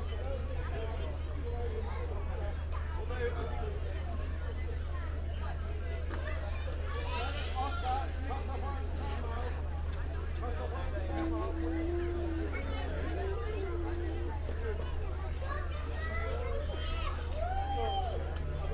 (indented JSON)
{
  "title": "The May Fayre, The Street, South Stoke, UK - The concert band warming up",
  "date": "2017-05-01 12:51:00",
  "description": "This is the sound of the concert band warming up their instruments ahead of performing a variety of very jolly numbers to celebrate the 1st of May.",
  "latitude": "51.55",
  "longitude": "-1.14",
  "altitude": "47",
  "timezone": "Europe/London"
}